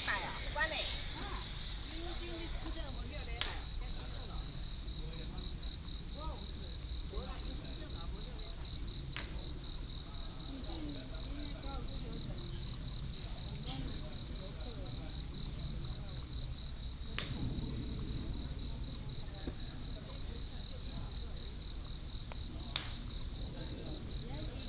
Tamsui District, New Taipei City - Sound of insects
Sound of insects, Next to the golf course, Rainy Day, Aircraft flying through
New Taipei City, Taiwan